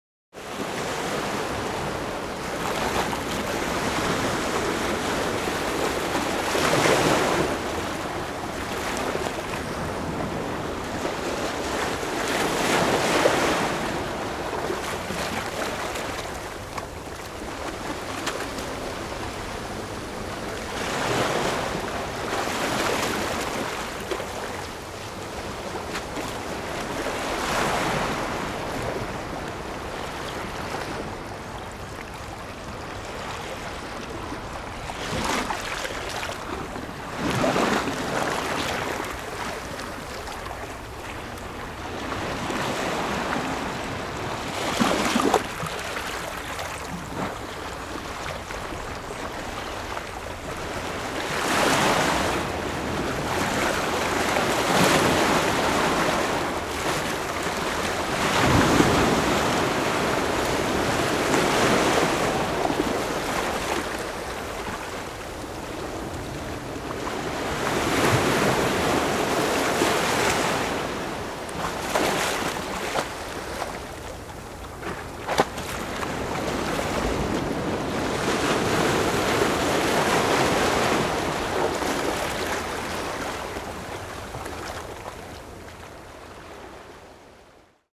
Beach, Sound of the waves
Zoom H4n+Rode NT4